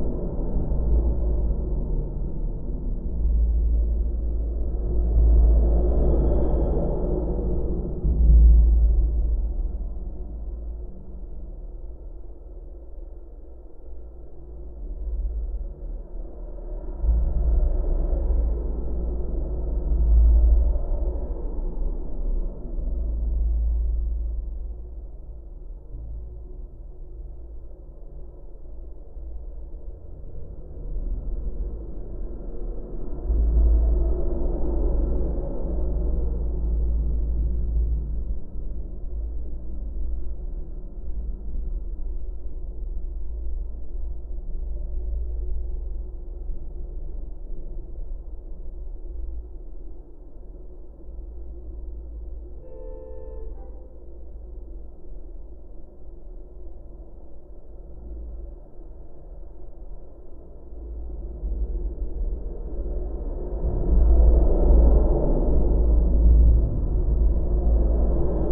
Vilnius, Lithuania, Zverynas bridge
Geophone placed on metalic constructions of bridge.
Vilniaus apskritis, Lietuva, 3 March, 13:30